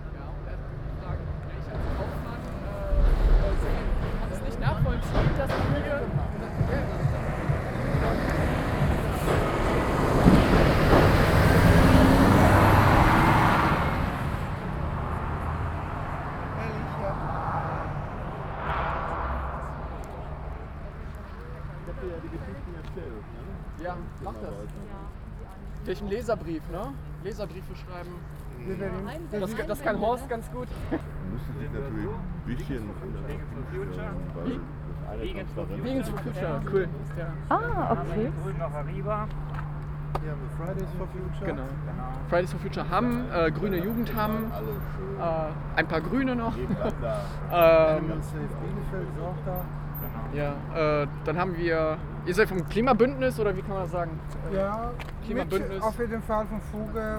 Mahnwache gegen die geplante Erweiterung bei Westfleisch in Hamm-Uentrop.
Nordrhein-Westfalen, Deutschland, 4 June, ~12:00